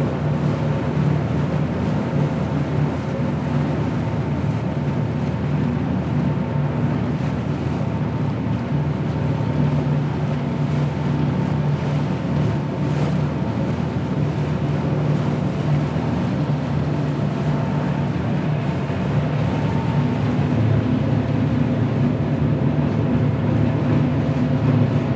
isola giudecca, Venezia VE, Italien - Venice - Vaporetto 4.1
On a Vaporetto taxi boat in the late afternoon on a sunny July evening. The sound of the motor, passengers and water in a refreshing wind.
international ambiences
soundscapes and art spaces
Veneto, Italia, 13 July 2022, 18:30